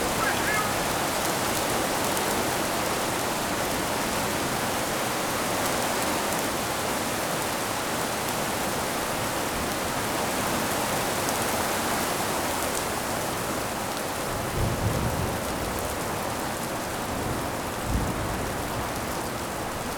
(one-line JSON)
{"title": "Innstraße, Innsbruck, Österreich - Blitz&Donner im Waltherpark", "date": "2017-06-06 16:56:00", "description": "Gewitter, Starkregen, vogelweide, waltherpark, st. Nikolaus, mariahilf, innsbruck, stadtpotentiale 2017, bird lab, mapping waltherpark realities, kulturverein vogelweide", "latitude": "47.27", "longitude": "11.39", "altitude": "576", "timezone": "Europe/Vienna"}